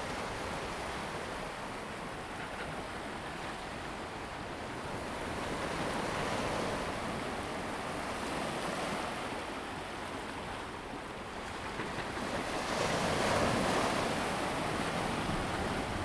sound of the waves
Zoom H2n MS +XY